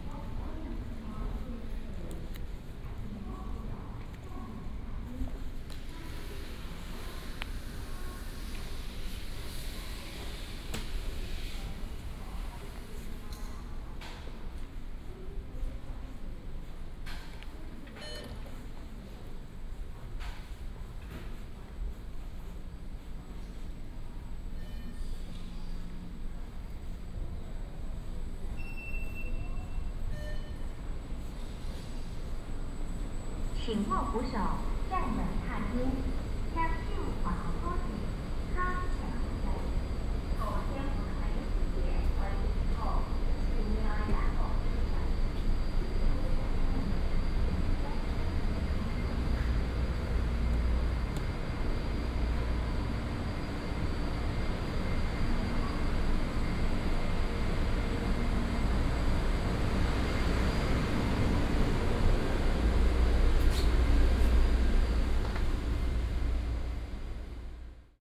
Huanbei Station, Zhongli, Taoyuan City - Walking at the station
Walking at the station, Binaural recordings, Sony PCM D100+ Soundman OKM II